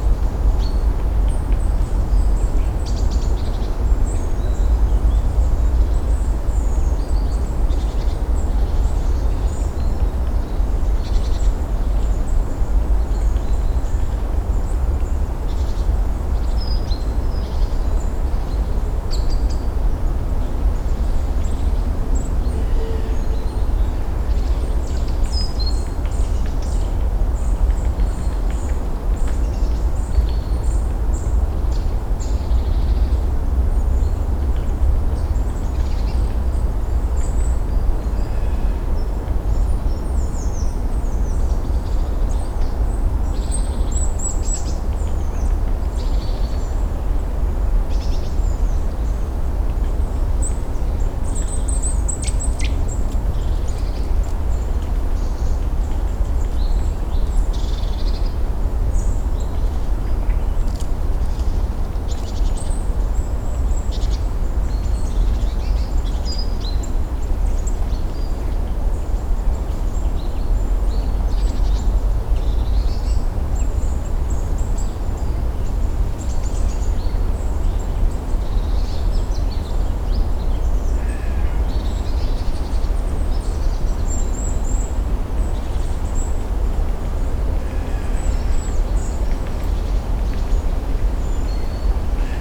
Morasko Nature Reserve - autumn moring
morning nature sounds in the Morasko forest. (roland r-07)